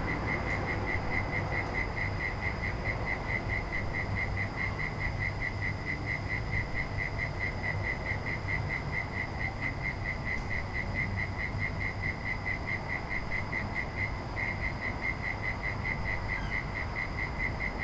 Currumbin QLD, Australia - Sounds of the night
This was recorded in my garden on a warm night in March. The sun had set. We live in between a creek and hinterland and also close to an airport. Crickets, dogs barking, planes, traffic, geckos, someone in the house typing on their laptop. Recorded on a Zoom H4N.
27 March 2015